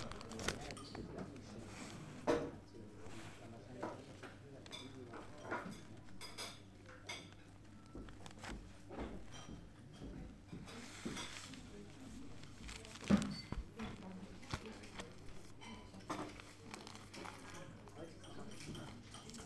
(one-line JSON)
{"title": "Krems an der Donau, Österreich - Speisesaal Kolping-Haus", "date": "2013-01-27 07:45:00", "description": "Frühstücks-Speisesaal des Kolping-Hauses der Donau- Universität, ein Stück für Perkussion & Stimmen", "latitude": "48.41", "longitude": "15.59", "altitude": "204", "timezone": "Europe/Vienna"}